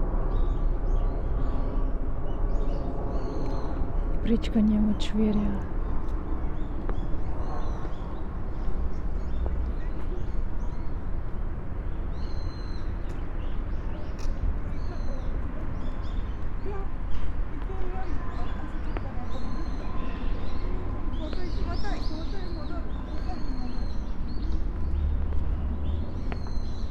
koishikawa korakuen gardens, tokyo - stones, marsh, red bridge
crossing the marsh with stepping on the stones and walking over the red bridge, birds ... gardens sonority